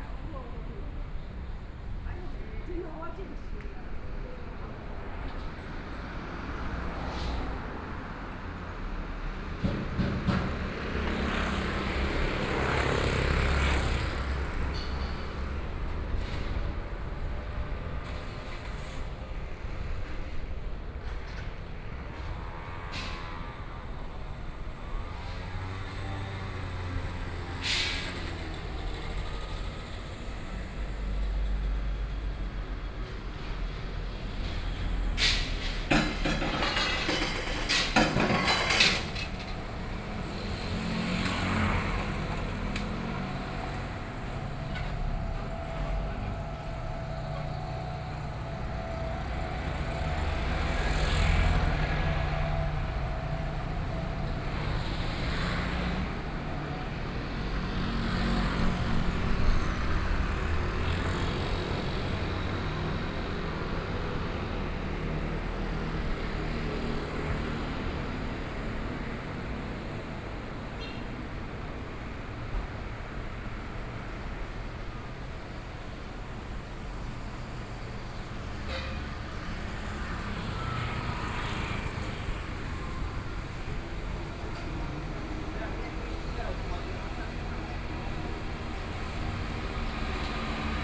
Shenghou St., Yilan City - Walking in the street
Walking in the street, Traffic Sound, Hot weather
Sony PCM D50+ Soundman OKM II
Yilan County, Taiwan, 5 July 2014, 10:01